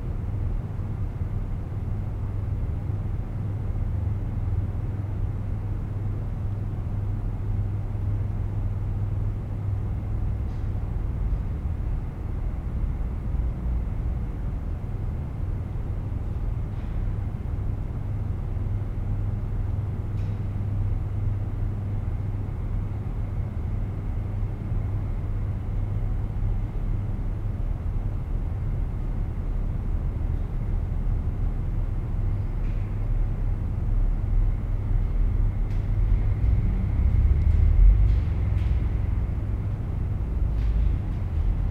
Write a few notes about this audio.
sound of the bridge on the +15 walkway Calgary